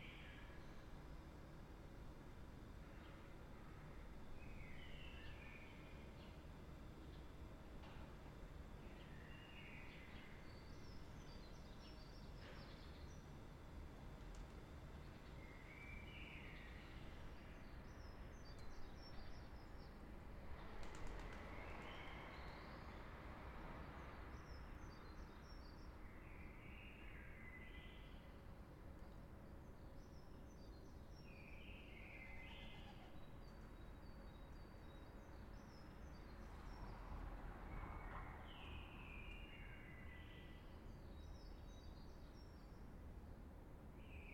Rue Verte, Schaerbeek, Belgique - Silent City : sunrise
From a window 2nd floor. Zoom H6. Early in the morning when the sun came up. 4th weeks of lockdown.
Région de Bruxelles-Capitale - Brussels Hoofdstedelijk Gewest, België - Belgique - Belgien, April 2020